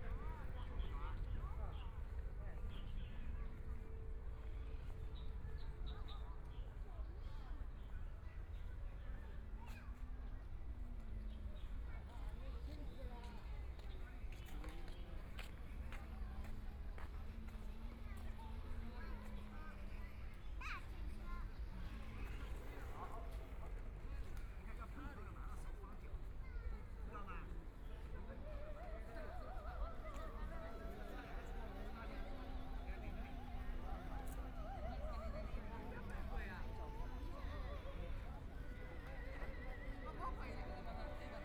Yangpu Park, Shanghai - Diabolo
Park on the grass, People are diabolo, Binaural recording, Zoom H6+ Soundman OKM II
26 November 2013, ~12:00